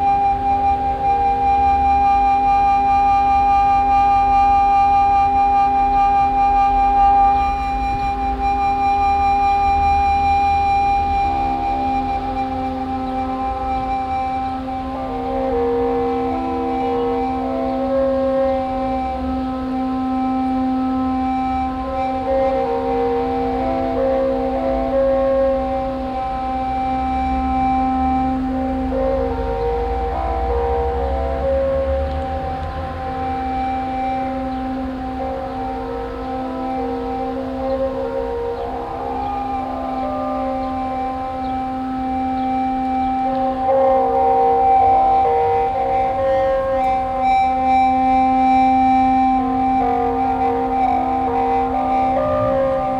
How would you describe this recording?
At the temporary sound park exhibition with installation works of students as part of the Fortress Hill project. Here the sound of a work realized by Ana Maria Huluban and the silent chamber group, coming out of one the concrete tubes that are settled all over the hill park area. Soundmap Fortress Hill//: Cetatuia - topographic field recordings, sound art installations and social ambiences